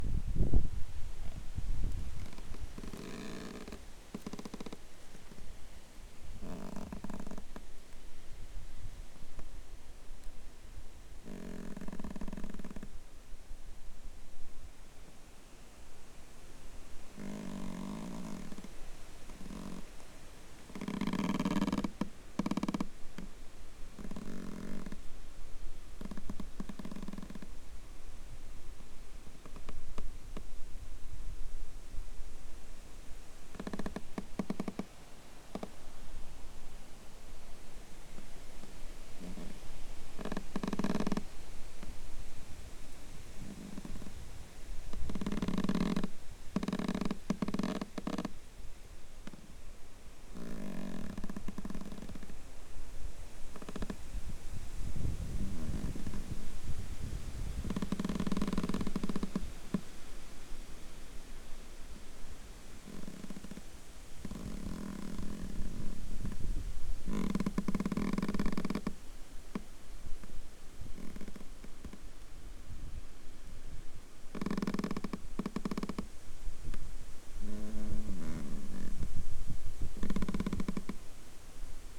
Assesse, Belgique - sorbier craque près d'Yvoir
wind blows and make an old sorbier long young branch crack on his old trunk
Assesse, Belgium, 24 August 2015